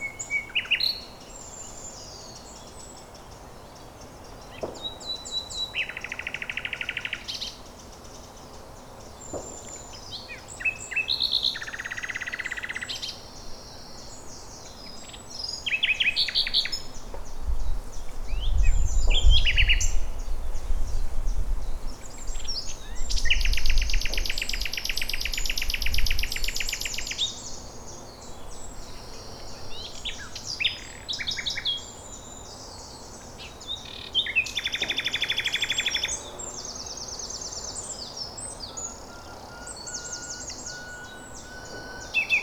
Weeley, Essex, UK - Nightingale with Clay Pigeon Shoot
Recorded with Olympus LS-10